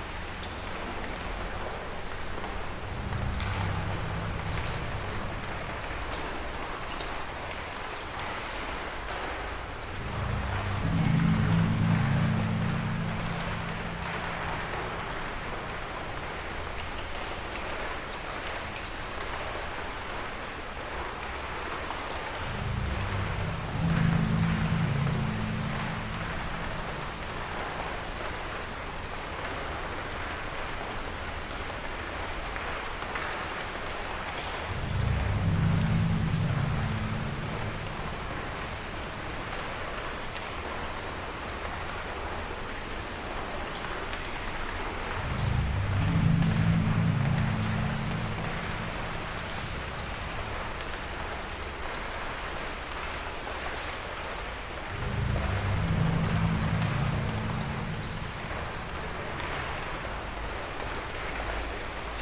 water tower tank drone, Torun Poland
playing the structural bars on the old water tank while it is raining outside